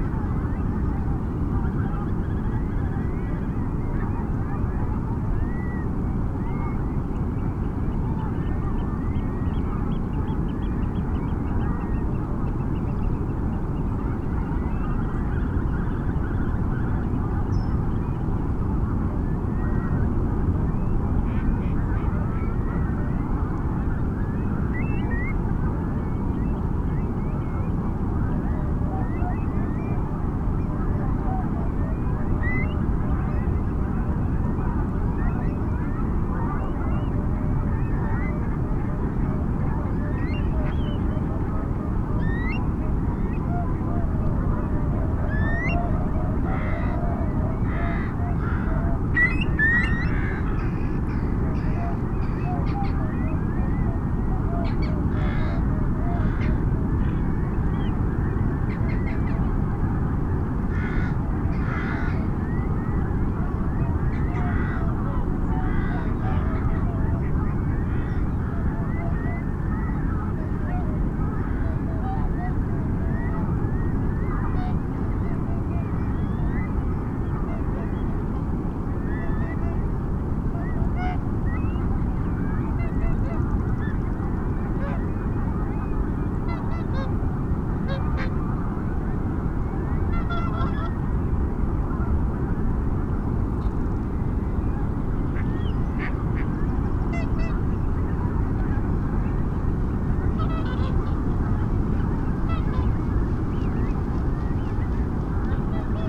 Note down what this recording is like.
pink-footed geese soundscape ... leaving roost ... SASS on tripod ... bird calls from ... whooper swan ... curlew ... dunnock ... mallard ... wren ... rook ... crow ... robin ... blackbird ... wigeon ... reed bunting ... pheasant ... bar-tailed godwit ... oystercatcher ... greylag geese ... turnstone ... rock pipit ... black-headed gull ... ringed plover ... first group leave at 5:10 ish ... background noise ... a particularly raging sea ... the sound of the birds described by some one as a 'wild exhilarating clangour' ...